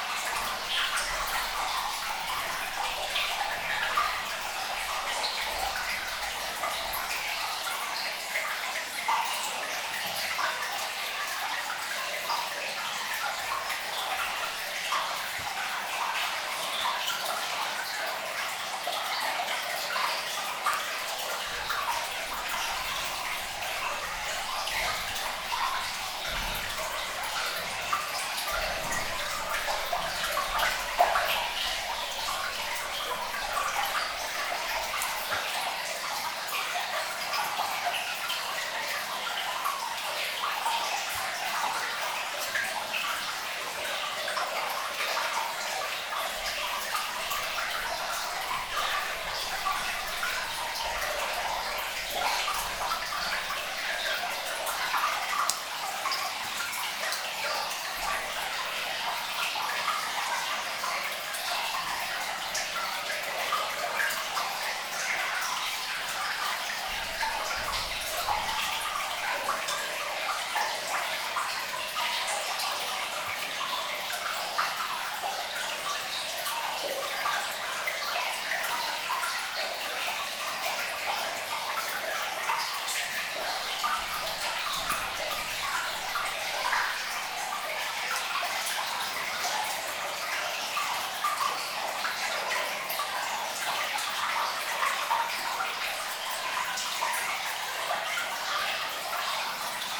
In the Saint-Georges d'Hurtières underground mine, water is quietly flowing.
Saint-Georges-d'Hurtières, France, 8 June 2017, 08:00